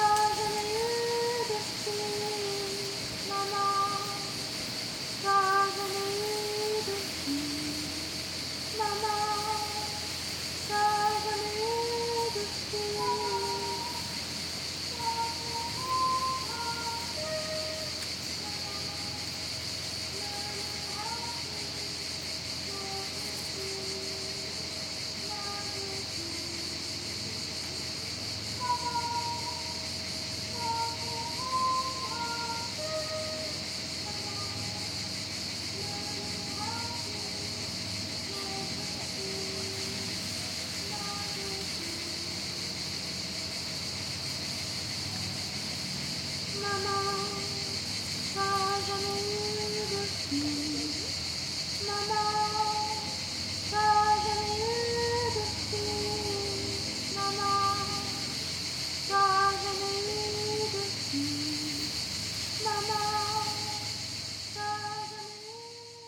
Saintes-Maries-de-la-Mer, Frankreich - Château d'Avignon en Camargue - Sound art work by Emma Dusong, 'Le domaine des murmures # 1'.
Château d'Avignon en Camargue - Sound art work by Emma Dusong, 'Le domaine des murmures # 1'.
From July, 19th, to Octobre, 19th in 2014, there is a pretty fine sound art exhibiton at the Château d'Avignon en Camargue. Titled 'Le domaine des murmures # 1', several site-specific sound works turn the parc and some of the outbuildings into a pulsating soundscape. Visitors are invited to explore the works of twelve different artists.
In this particular recording, you hear a sound art work by Emma Dusong, the sonic contributions of several unidentified crickets, still the drone of the water pump from the machine hall in the distance, as well as distant traffic and the chatter and laughter of some visitors passing by.
[Hi-MD-recorder Sony MZ-NH900, Beyerdynamic MCE 82]